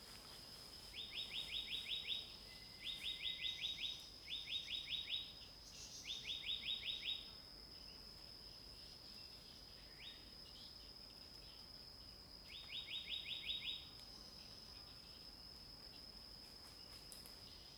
Birds singing, face the woods, Dog
Zoom H2n MS+ XY
Puli Township, 華龍巷164號, 26 April 2016, 06:12